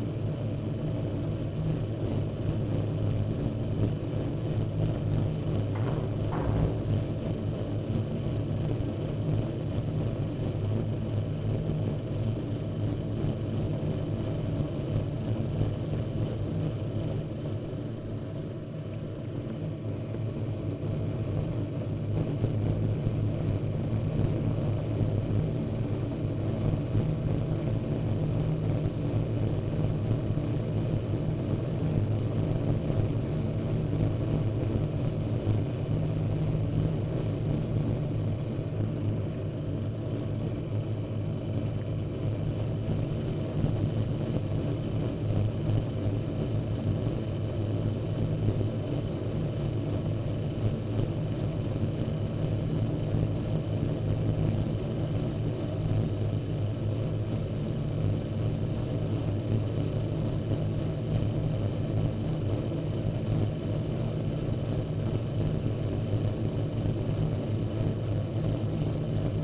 Southville, Bristol, Bristol, City of Bristol, UK - Noisy Air Vent on Pavement
Yellow air vent on pavement making loud clicking noise, recorded with NTG-2 onto minidisc.
20 December 2014, 20:00